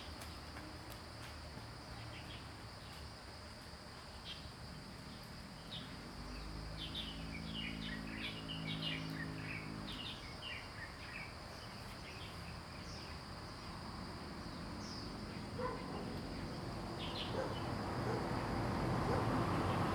{"title": "桃米社區工坊, Puli Township, Nantou County - Birds and Traffic Sound", "date": "2015-09-03 07:08:00", "description": "Birds singing, Traffic Sound\nZoom H2n MS+XY", "latitude": "23.94", "longitude": "120.93", "altitude": "470", "timezone": "Asia/Taipei"}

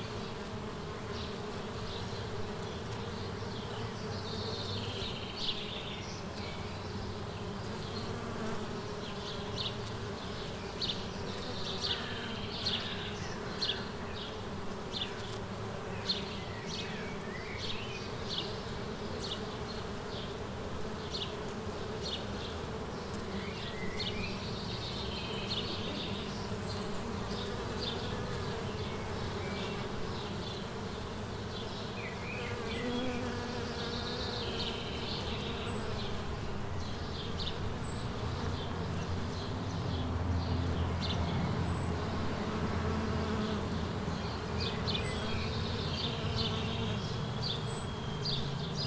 {"title": "Bd Pierpont Morgan, Aix-les-Bains, France - L'olivier", "date": "2016-06-11 12:30:00", "description": "Abeilles butinant sur un olivier, merles .....", "latitude": "45.70", "longitude": "5.90", "altitude": "240", "timezone": "Europe/Paris"}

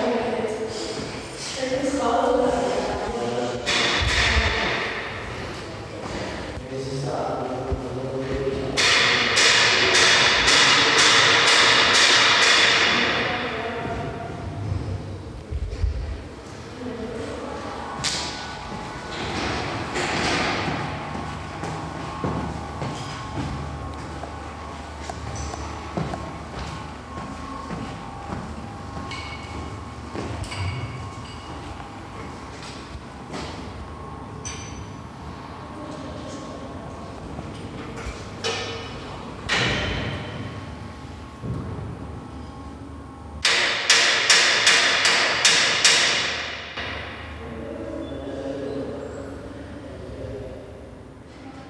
{"title": "Litvínov, Česká republika - hallway", "date": "2013-05-26 16:11:00", "description": "more infos in czech:", "latitude": "50.61", "longitude": "13.64", "altitude": "388", "timezone": "Europe/Prague"}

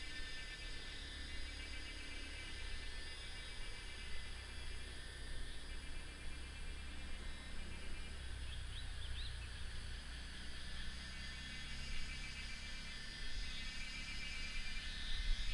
{"title": "中路坑溼地, 桃米生態村 - Cicadas cry", "date": "2015-06-10 16:45:00", "description": "Cicadas cry, Bird calls, Very hot weather", "latitude": "23.94", "longitude": "120.92", "altitude": "492", "timezone": "Asia/Taipei"}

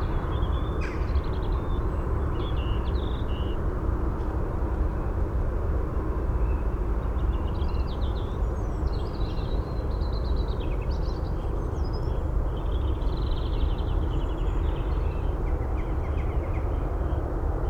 {"title": "High St N, Dunstable, UK - Houghton Regis Chalk Pit ... west reedbed ...", "date": "2006-02-06 06:45:00", "description": "Houghton Regis Chalk Pit soundscape ... west reedbed ... parabolic on tripod to minidisk ... bird calls from carrion crow ... jackdaw ... robin ... corn bunting ... water rail ... reed bunting ... moorhen ...snipe ... lots of traffic noise ... just a note ... although man made ... this was once the only site in southern England where water stood on chalk ... it was an SSI ... sadly no more ...", "latitude": "51.90", "longitude": "-0.54", "altitude": "118", "timezone": "GMT+1"}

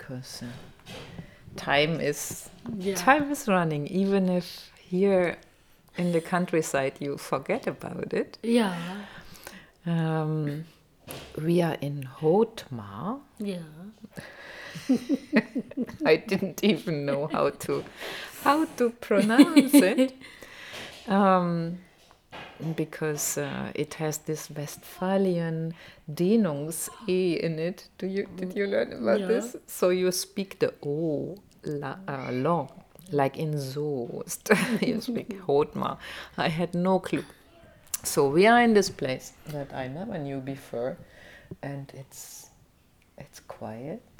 {"title": "Hoetmar, Germany - Wacuka - Who i am goes well with my name...", "date": "2020-10-23 13:40:00", "description": "It's only quite recently that Maryann relocated from town to the Germany countryside... a good moment to reflect together with her on her journey from her native Kenya to Germany ... and to her present life and work...\n\"who i am goes well with my name...\" Maryann explains as if in summarizing her life. \"Wacuka\" in kikuyu, means the one who is well taken care of and, the one who is taking care, the carer...", "latitude": "51.87", "longitude": "7.97", "altitude": "83", "timezone": "Europe/Berlin"}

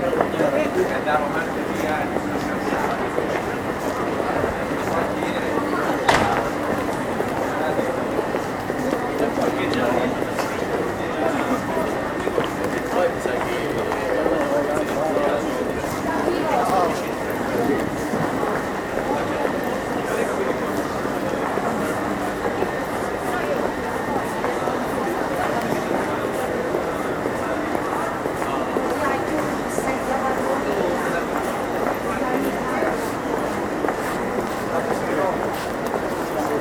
{"title": "SM Novella railway station, Firenze, Italy - off the train", "date": "2012-10-30 08:40:00", "description": "traing arriving at the station, jumping off and walking though the crowded station till the exit.", "latitude": "43.78", "longitude": "11.25", "altitude": "51", "timezone": "Europe/Rome"}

